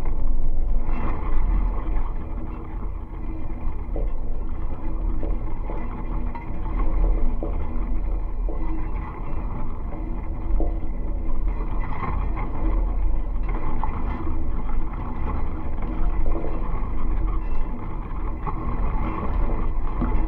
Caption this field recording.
small cemetery, fallen metallic cross, magnetic contact microphones